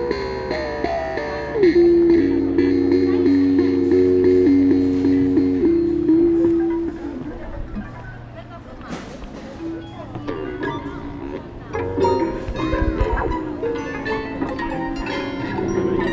Friedensplatz, Bonn, Deutschland - Erwin Staches Klangstäbe-Installation /
Bonn, Germany, 21 June 2014